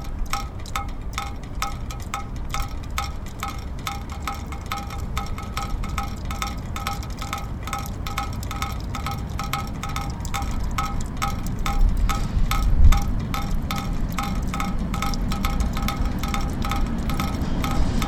{"title": "Palmovka, dripping water", "date": "2011-09-04 02:06:00", "description": "Raindrops resonating inside the gutters at the tramway comapny building, next to the New Synagogue at Palmovka.", "latitude": "50.10", "longitude": "14.47", "altitude": "192", "timezone": "Europe/Prague"}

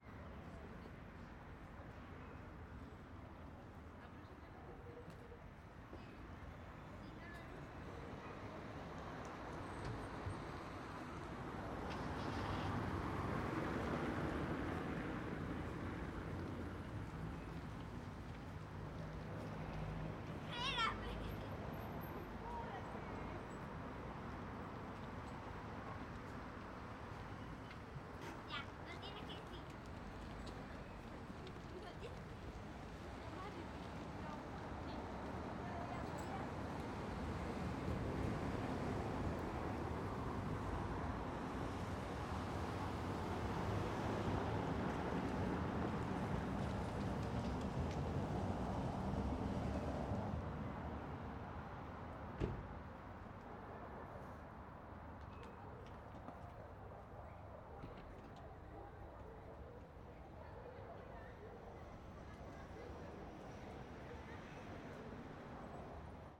{"title": "Irlanda, Valdivia, Los Ríos, Chili - LCQA AMB VALDIVIA RESIDENTIAL EVENING CAR PASSING VERY LIGHT VOICES WALLA MS MKH MATRICED", "date": "2022-08-25 19:30:00", "description": "This is a recording of a street located in Valdivia during evening. I used Sennheiser MS microphones (MKH8050 MKH30) and a Sound Devices 633.", "latitude": "-39.84", "longitude": "-73.24", "altitude": "16", "timezone": "America/Santiago"}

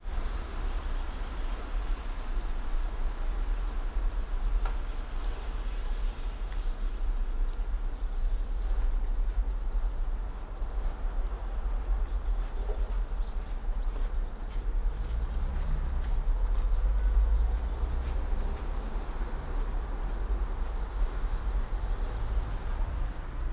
{"title": "all the mornings of the ... - feb 25 2013 mon", "date": "2013-02-25 07:46:00", "latitude": "46.56", "longitude": "15.65", "altitude": "285", "timezone": "GMT+1"}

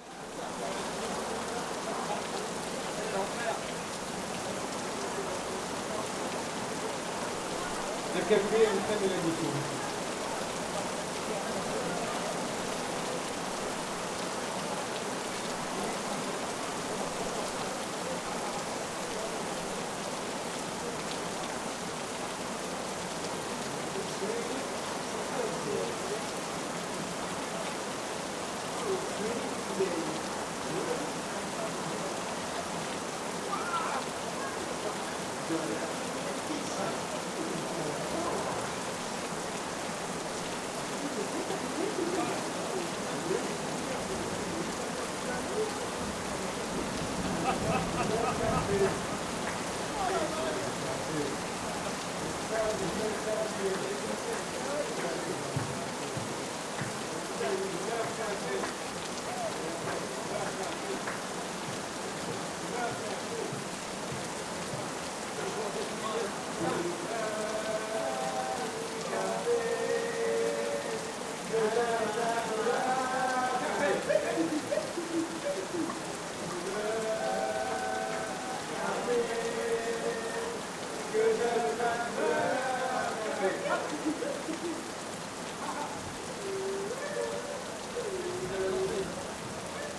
{"title": "Le bistro, Rainy Night", "description": "A rainy night at le Bistro, 19/09/2009", "latitude": "43.70", "longitude": "7.26", "altitude": "14", "timezone": "Europe/Berlin"}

